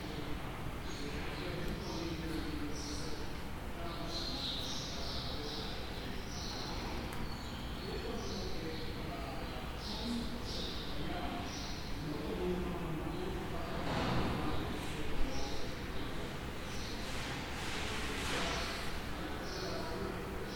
Shomrei Hahar St, Jerusalem - Corridor at Hebrew University
Corridor at hebrew university.